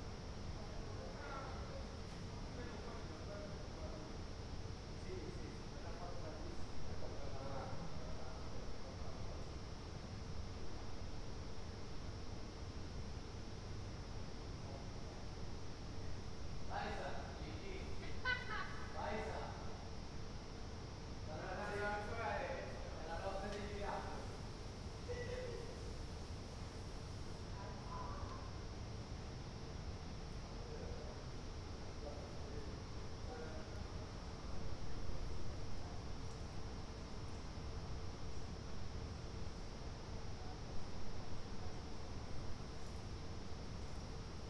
Ascolto il tuo cuore, città, I listen to your heart, city. Several chapters **SCROLL DOWN FOR ALL RECORDINGS** - Round midnight last day of students college opening in the time of COVID19
"Round midnight last day of students college opening in the time of COVID19" Soundscape
Chapter CXXI of Ascolto il tuo cuore, città. I listen to your heart, city
Saturday, August 1st, 2020, four months and twenty-one days after the first soundwalk (March 10th) during the night of closure by the law of all the public places due to the epidemic of COVID19.
Start at 00:28 a.m. end at 01:06 a.m. duration of recording 38’23”
The students college (Collegio Universitario Renato Einaudi) close on this day for summer vacation.
Go to following similar situation, Chapter CXXII, first day of college closing.
Torino, Piemonte, Italia